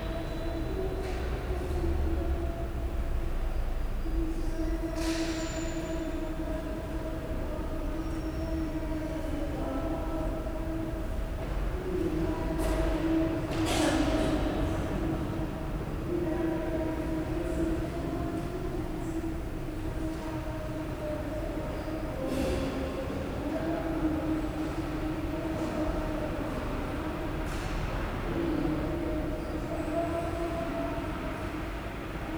Rue Pouchet, Paris, France - Des messes de semaine
Morning mass at the Catholic Church of Saint-Joseph des Épinettes taking place in the 'Oratorie' at the back of the church. Recorded using the on-board microphones of a Tascam DR40 towards the back of the nave.